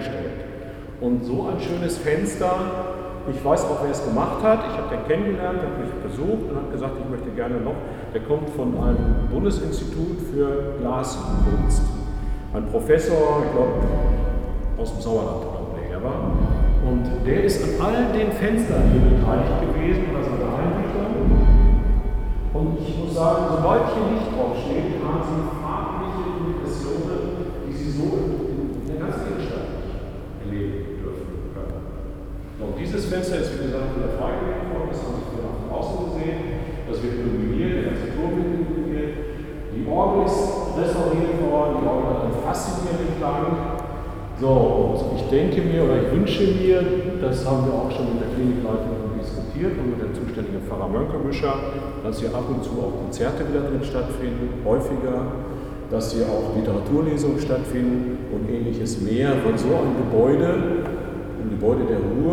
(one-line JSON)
{"title": "Chapel of the hospital, Hamm, Germany - In der Kapelle dea Marienhospitals...", "date": "2014-08-29 18:00:00", "description": "At the end of a guide tour to special places around the “Marienhospital”, Werner Reumke leads us to one of his favorite places in the Martin-Luther-Viertel, the chapel of the Hospital… he often comes here early in the morning, he says, musing over the fantastic colored light reflexes through the windows…\nAm Ende einer Führung zu besonderen Orten um das Marienhospital führt uns Werner Reumke an einen seiner Lieblingsorte im Martin-Luther-Viertel, die Kapelle des Krankenhauses…", "latitude": "51.68", "longitude": "7.82", "altitude": "65", "timezone": "Europe/Berlin"}